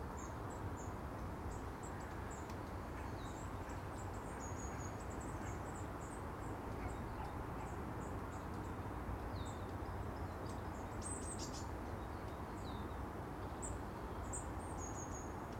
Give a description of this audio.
The Drive Moor Crescent Moorfield Lodore Road, Stood back from the edge of open grass, still, I am not obvious, a dog noses by then sees me, and freezes, then barks, to be chastised by its owner